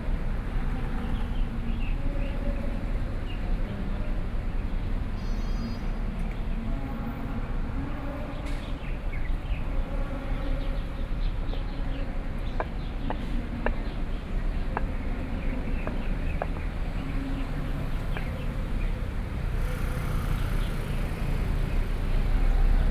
walking in the street, There are protest marches distant sound, Traffic Noise, Binaural recordings, Sony PCM D50 + Soundman OKM II
15 October, ~11:00